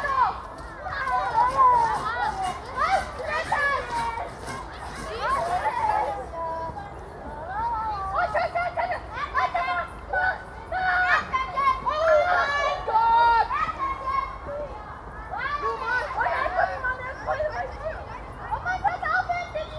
After school playground
Kids playing after school is one of Berlins most characteristic sounds.